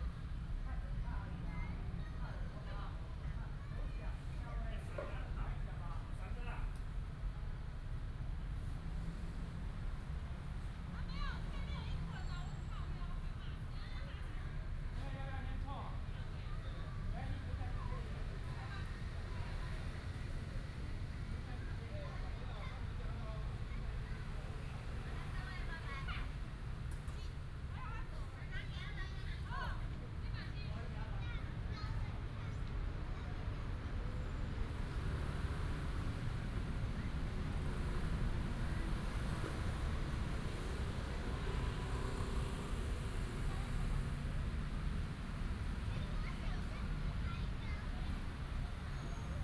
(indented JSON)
{
  "title": "Nanchang Park, Zhongzheng Dist., Taipei City - in the Park",
  "date": "2017-02-03 18:09:00",
  "description": "in the Park, Child, Children's play area, Traffic sound",
  "latitude": "25.03",
  "longitude": "121.52",
  "altitude": "17",
  "timezone": "GMT+1"
}